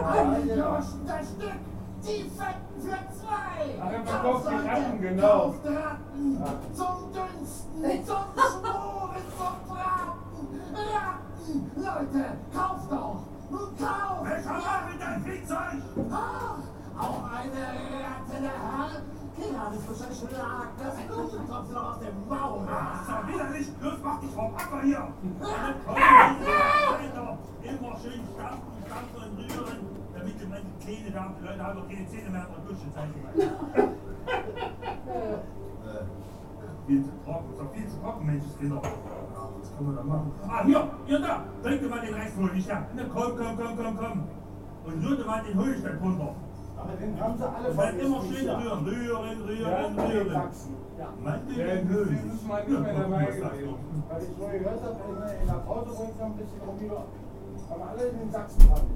Moabit, Berlin, Deutschland - klaus störtebeker in den spenerstuben
klaus störtebeker in den spenerstuben; spenerstuben, spenerstr. 29, 10557 berlin